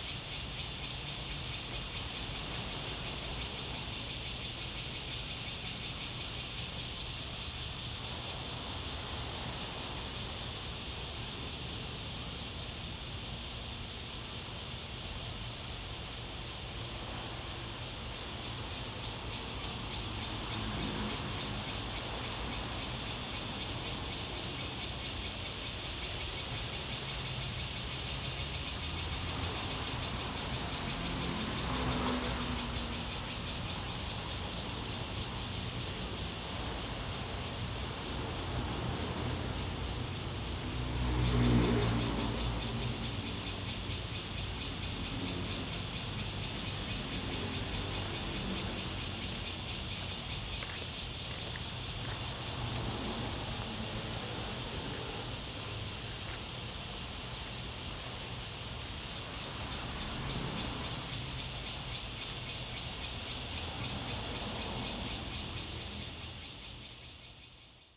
淡水海關碼頭, New Taipei City - At the quayside
At the quayside, Cicadas cry, The sound of the river, Traffic Sound
Zoom H2n MS+XY